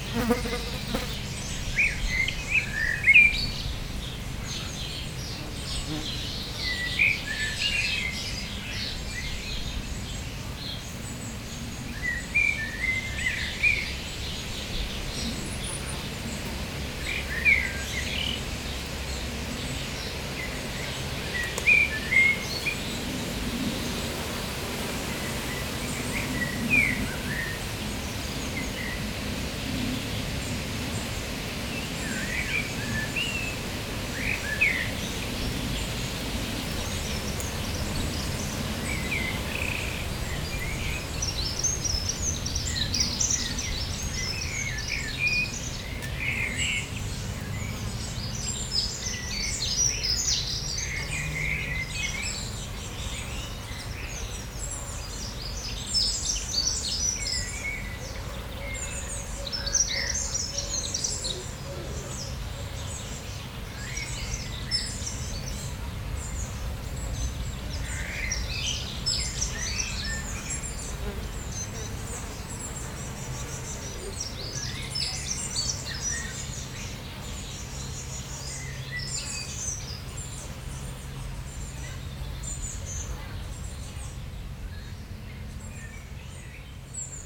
Chaumont-Gistoux, Belgique - Rural landscape
A rural landcape, with Common Wood Pigeon, Great tit, House Sparrows and too much planes.
Chaumont-Gistoux, Belgium